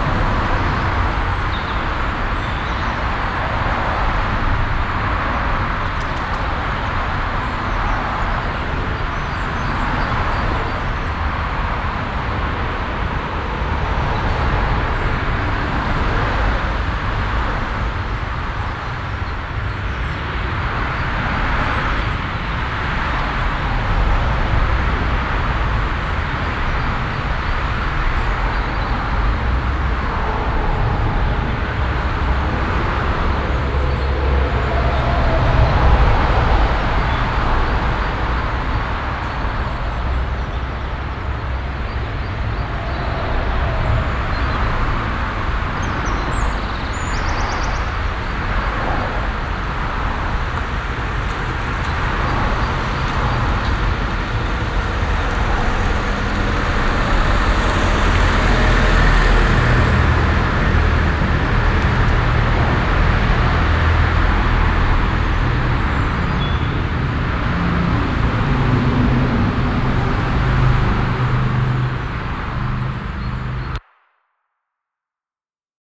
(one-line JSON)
{"title": "essen, emscherstraße, unter autobahnbrücke - Essen, Emscher street under highway bridge", "date": "2014-04-09 08:00:00", "description": "A second recording at the same spot - some years later\nEine zweite Aufnahme am selben Ort, einige Jahre später\nProjekt - Stadtklang//: Hörorte - topographic field recordings and social ambiences", "latitude": "51.51", "longitude": "7.03", "altitude": "43", "timezone": "Europe/Berlin"}